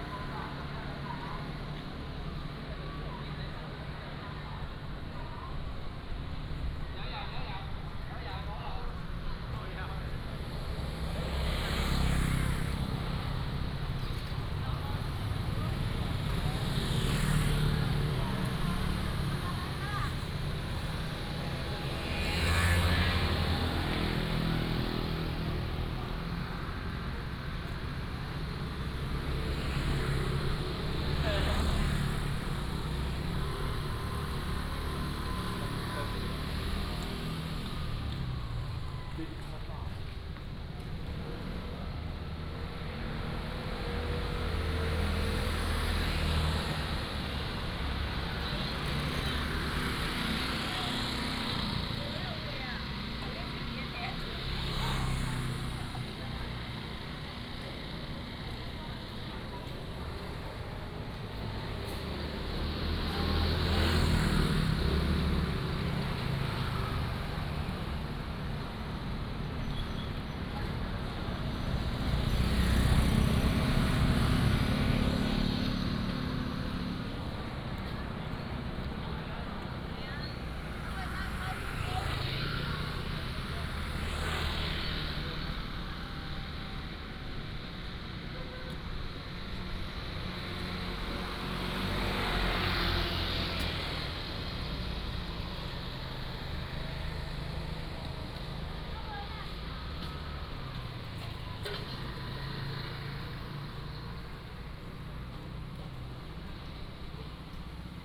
Minsheng Rd., Hsiao Liouciou Island - Walking in the Street
Walking on the road, Traffic Sound, Various shops
1 November 2014, 17:50